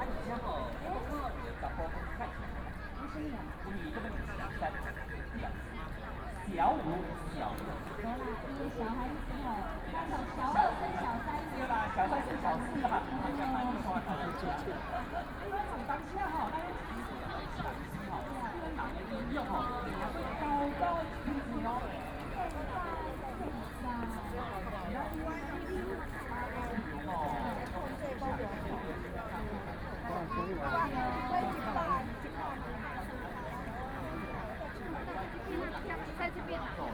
At the lake, A lot of people waiting for fireworks, Frogs sound, Very many people in the park, Please turn up the volume a little. Binaural recordings, Sony PCM D100+ Soundman OKM II